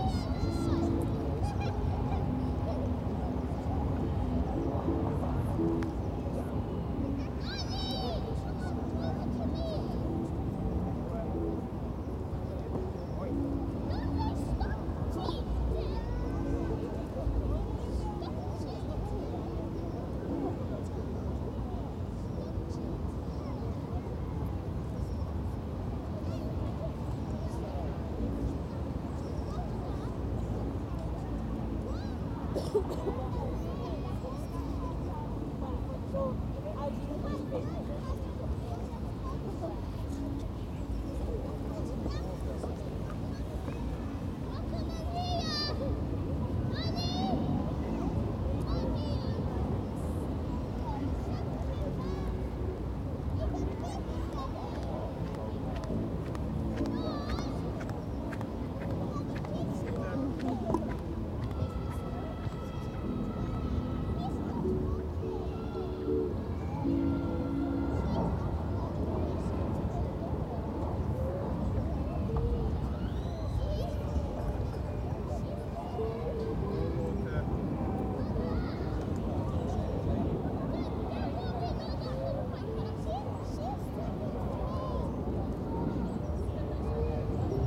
Richmond Green - Sunny, windy and noisy day in Richmond
Pretty noisy environment. Sunday in Richmond on Thames, lots of kids, planes, someone playing an electric guitar not far from me... Although I have a decent, long hair DeadCat, the wind still can be heard.
Sony PCM D100 and a little EQ
Richmond, UK, 22 April